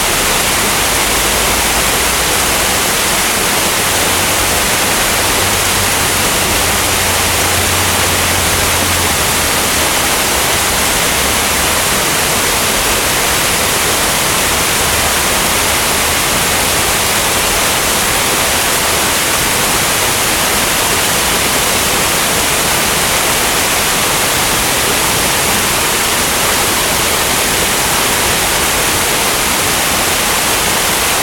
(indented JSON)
{
  "title": "E Randolph St, Chicago, IL, USA - Plaza Level",
  "date": "2017-06-09 14:27:00",
  "description": "Looking at the Fountain at plaza level",
  "latitude": "41.88",
  "longitude": "-87.62",
  "altitude": "179",
  "timezone": "America/Chicago"
}